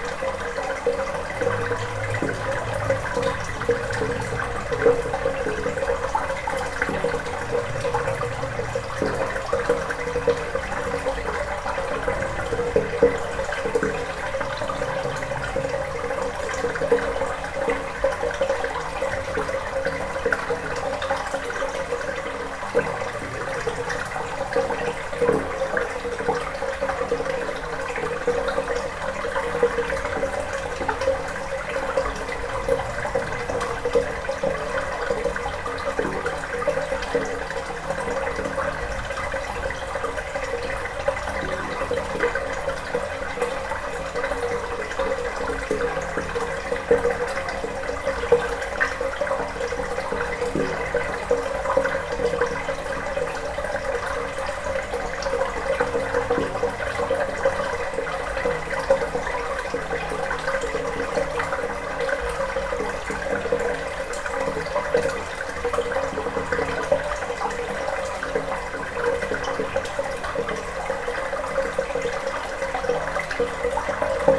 Ottange, France - A singing pipe
In an underground mine, the sound of a singing pipe, water is flowing inside.